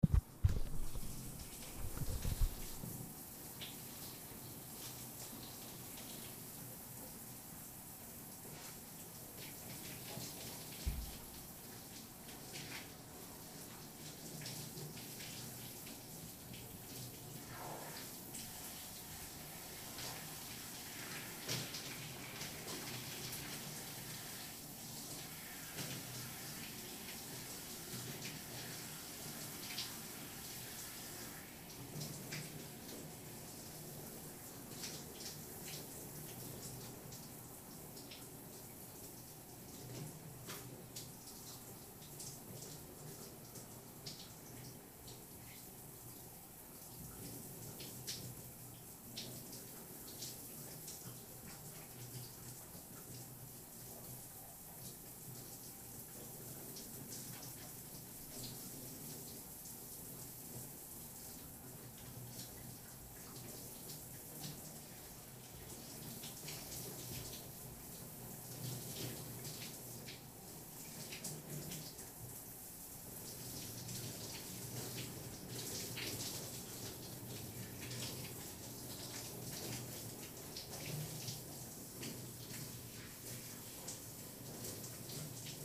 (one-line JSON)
{"title": "Shower rain", "date": "2009-10-04 09:39:00", "description": "A thorough shower in the morning.", "latitude": "52.50", "longitude": "13.45", "altitude": "39", "timezone": "Europe/Berlin"}